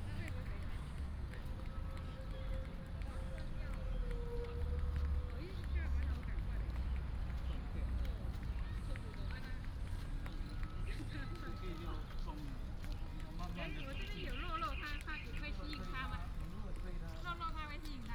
in the park, birds sound, traffic sound
Taoyuan City, Taiwan